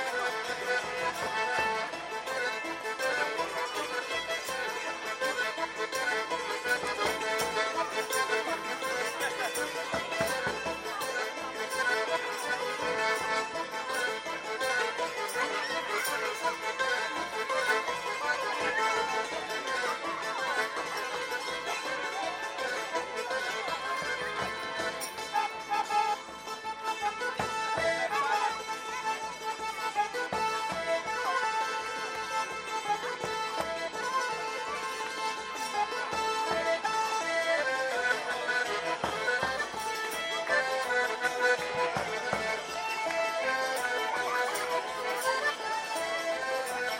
aleja Jerzego Fedorowicza, Białystok, Poland - Potańcówka nad Białą pt 1
27 August 2018, 17:41